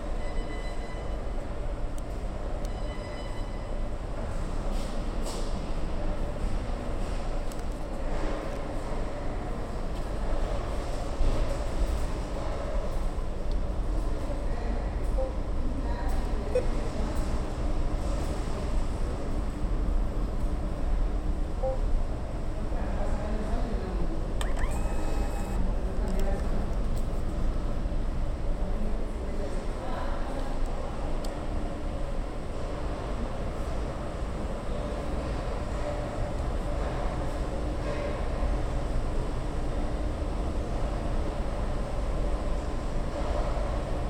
Subway T Mariatorget, West entrance. Walking around in the ticket hall.
Stockholm, Sweden